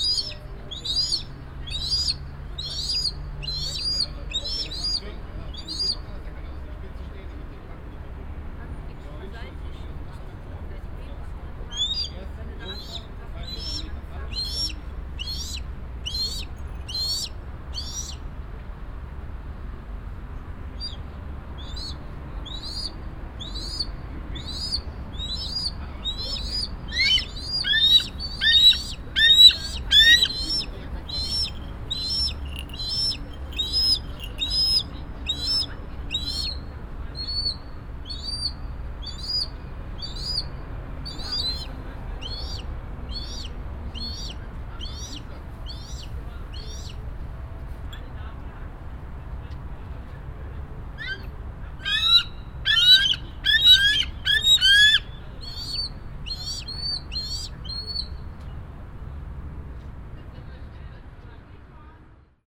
cologne, rheinauhalbinsel, schokomuseum, seegreifvögel
seeadler und andere greifvögel bei einer tierschau auf der museumsdachterasse, morgens
soundmap nrw:
social ambiences, topographic field recordings
rheinauhalbinsel, schokoladenmuseum, September 2008